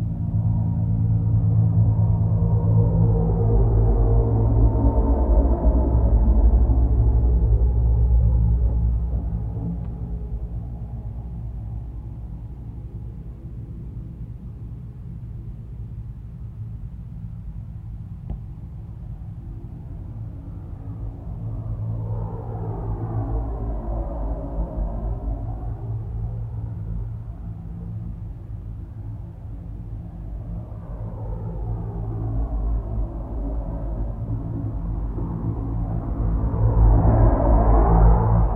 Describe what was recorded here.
Metabolic Studio Sonic Division Archives: Ambient Highway 395 traffic sounds as heard through cattle guard grate next to roadway. Recorded on H4N with shure VP64 microphone inside cattle guard piping structure